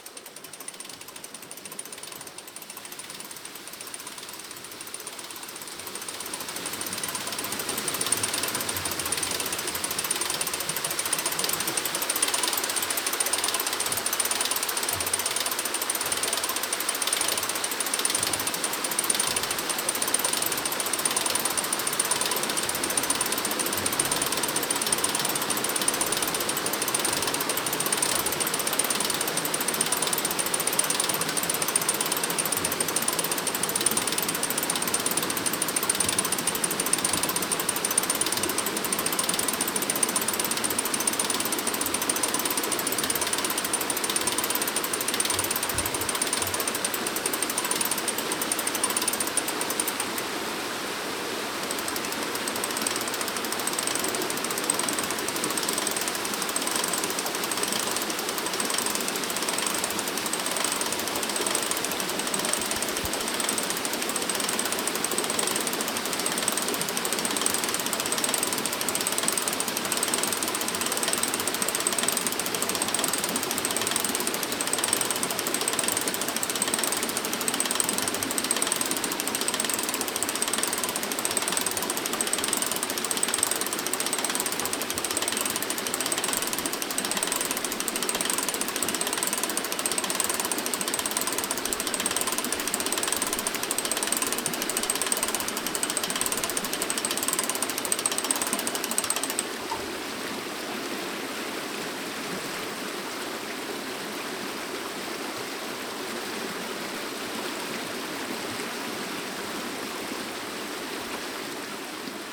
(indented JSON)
{
  "title": "enscherange, rackesmillen, dam",
  "date": "2011-09-23 19:05:00",
  "description": "At the dam of the old water mill. The sound of the mechanic while opening the dams gates one by one and the floating sound of the water.\nEnscherange, Rackesmillen, Staudamm\nAuf dem Staudamm der alten Mühle. Der klang der Zahnradmeckanik während des Öffnens der Schleusen und das Flieessen des Wassers.\nLe barrage de l’ancien moulin à aubes. Le bruit du mécanisme quand les portes du barrage s’ouvrent l’une après l’autre et le bruit de l’eau qui coule.",
  "latitude": "50.00",
  "longitude": "5.99",
  "altitude": "312",
  "timezone": "Europe/Luxembourg"
}